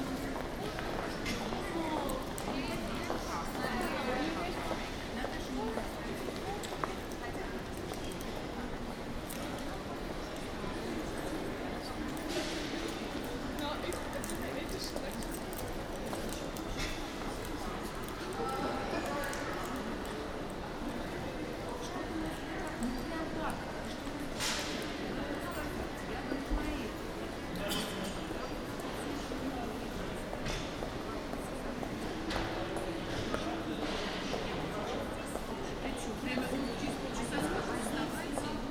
Passage, Den Haag, Nederland - Passage
Binaural Recording.
General atmosphere in The Hagues beautiful Passage.
Recorded as part of "The Hague Sound City" for State-X/Newforms 2010.